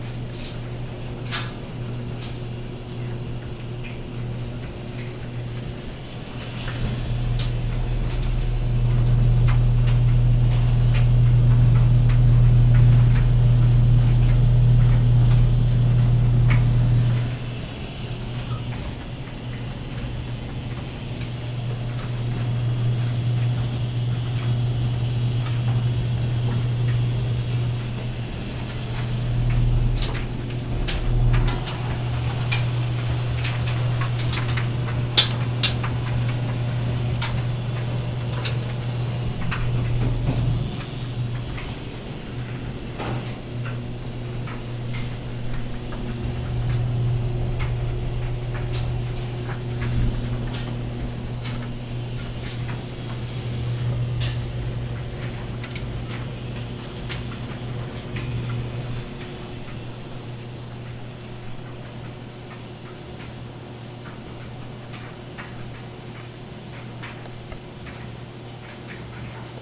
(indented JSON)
{
  "title": "W Lafayette Ave, Baltimore, MD, USA - Dryer cycle",
  "date": "2019-09-03 15:45:00",
  "description": "A dryer containing a pair of jeans and other items of clothing.",
  "latitude": "39.31",
  "longitude": "-76.62",
  "timezone": "GMT+1"
}